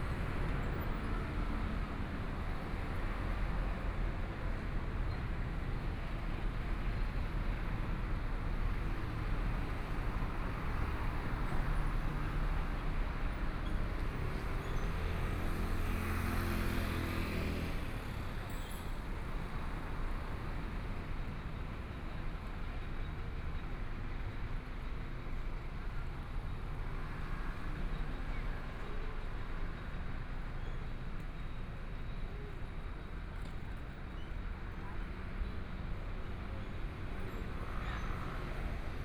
Xinsheng Rd., Taitung City - walking in the street
Walking on the street, Traffic Sound, Binaural recordings, Zoom H4n+ Soundman OKM II ( SoundMap2014016 -19)
Taitung City, Taitung County, Taiwan, 16 January 2014